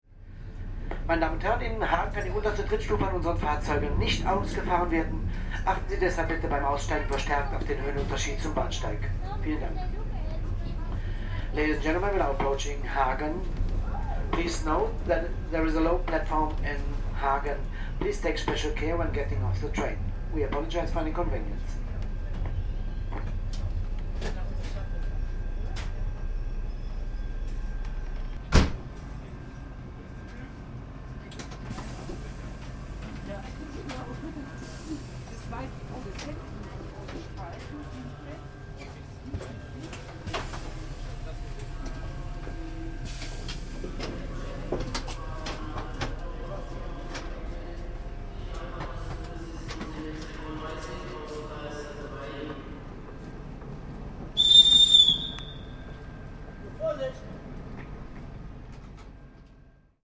hagen, die unterste Trittstufe - trittstufe 1
warning of dangerous stair conditions when leaving the train
Hauptbahnhof, Deutschland